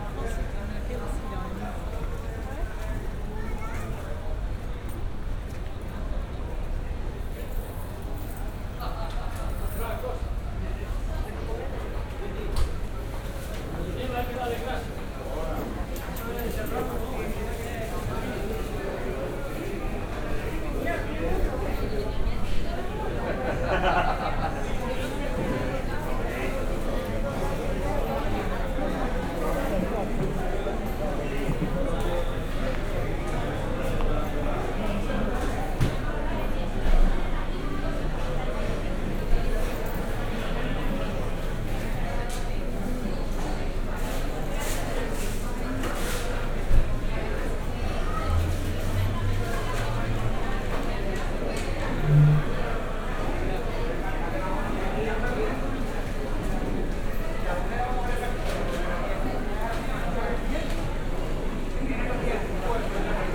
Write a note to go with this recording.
(binaural rec) walking around and recording at the municipal market in Santa Cruz de Tenerife.